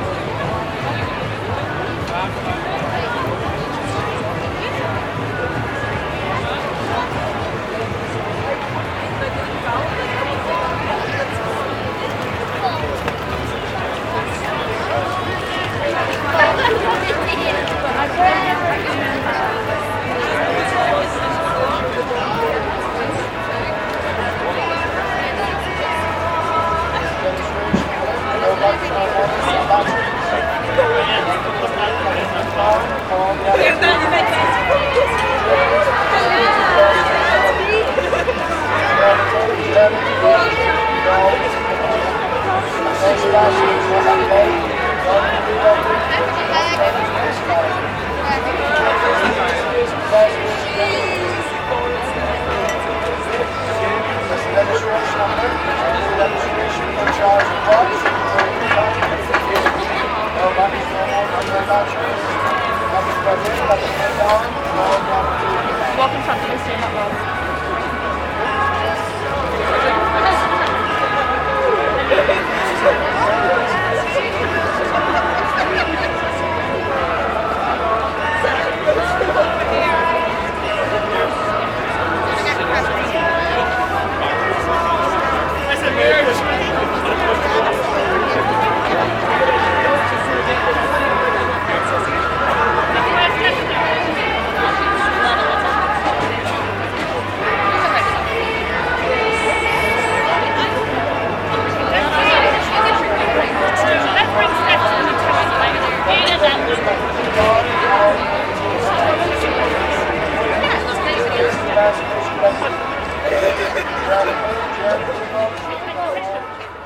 Belfast, UK - Belfast Christmas Market Inside- Pre-Restriction
After two years without any Christmas Markets, the city of Belfast decided to organise it again. This is a double stitched recording from the right and left inside of the market. Recording of two market recording positions, multiple music genres, merchant stands, chatter, passer-by, doors opening/closing, close/distant dialogue, fire burning, children, strollers, laughter, and objects slammed and banged.
Northern Ireland, United Kingdom, December 2021